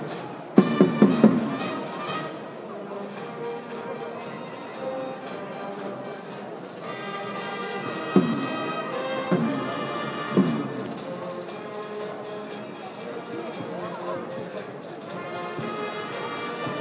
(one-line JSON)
{"title": "Al.Unii, Łódź, Polska - The MPK Orchestra plays on the final of the rugby league", "date": "2016-06-24 17:21:00", "description": "The MPK (urban transport company in Lodz) Orchestra plays on the final of the polish rugby extraleague\nFinal match: Budowlani Łódź vs Lechia Gdańsk", "latitude": "51.76", "longitude": "19.43", "altitude": "199", "timezone": "GMT+1"}